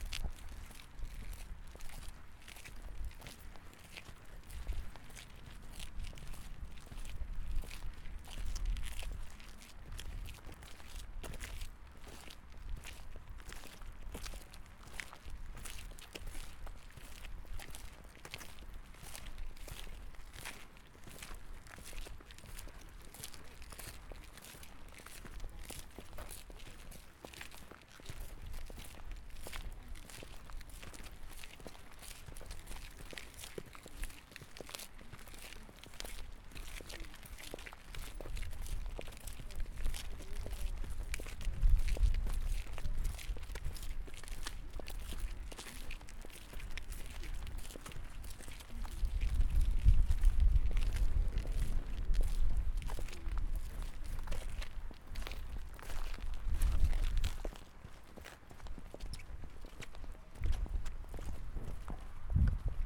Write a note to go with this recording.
Recorded with a Zoom H4N Pro, pointed at the ground while walking together with 17 other people, A sonic walk and deep listening to Kalamaja - organised by Kai Center & Photomonth, Tallinn on the 3rd of November 2019. Elin Már Øyen Vister in collaboration with guests Ene Lukka, Evelin Reimand and Kadi Uibo. How can we know who we are if we don't know who we were?... History is not the story of strangers, aliens from another realm; it is the story of us had we been born a little earlier." - Stephen Fry